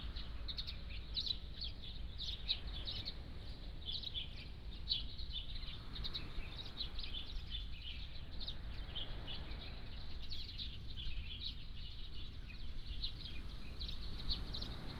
澎湖軍人公靈祠, Huxi Township - In the plaza
In the plaza, Birds singing, Sound of the waves, There mower noise nearby
Penghu County, Husi Township, 澎20鄉道, 21 October 2014